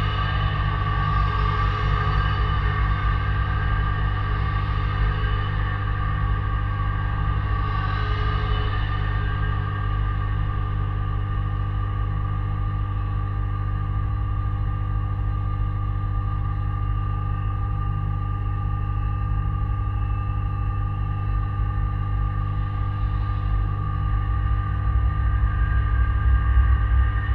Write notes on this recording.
Dual contact microphone recording of pedestrian bridge metal railing. Steady droning hum and resonating noises of cars passing below the bridge.